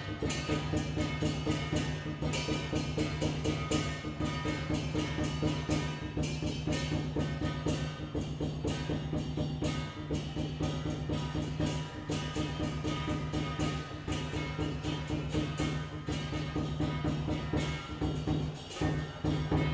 大仁街, Tamsui District - Traditional festival parade
Traditional festival parade
Zoom H2n MS+XY
New Taipei City, Taiwan, 12 April 2015, 13:50